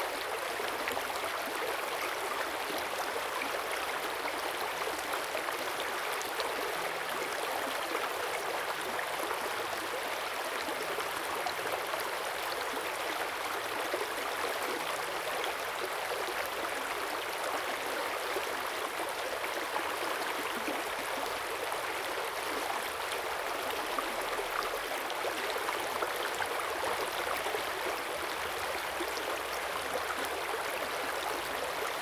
中路坑溪, 桃米里 Puli Township - Sound streams
Sound streams
Zoom H2n MS+XY